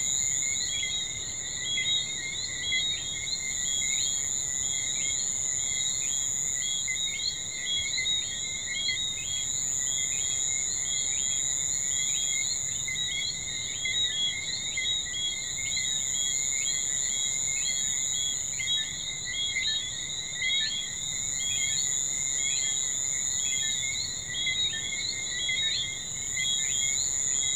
Close to Anse des Rochers, Saint-François, Guadeloupe - Guadeloupe island insects & frogs at night
Various sounds from insects and frogs at night, some of them sounding electronic or strident. Typical of the Guadeloupe island night ambiances.